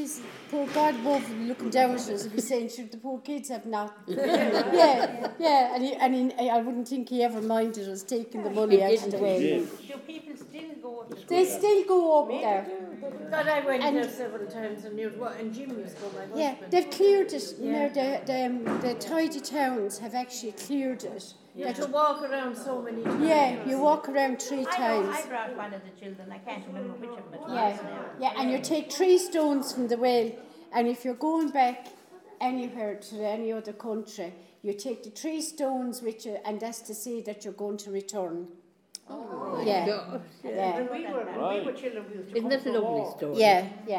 Tobar Íosa, Cahir Abbey, Co. Tipperary, Ireland - Mary Tobar Íosa
Mary from Cahir recalls her memories of the holy well Tobar Íosa near Cahir Abbey. Recording as part of the Sounding Lines Visual Art Project by Claire Halpin and Maree Hensey which intends to isolate and record unusual and everyday sounds of the River Suir in a visual way. Communities will experience a heightened awareness and reverence for the river as a unique historical, cultural and ecological natural resource. The artists will develop an interactive sound map of the River which will become a living document, bringing the visitor to unexpected yet familiar places.
21 March 2014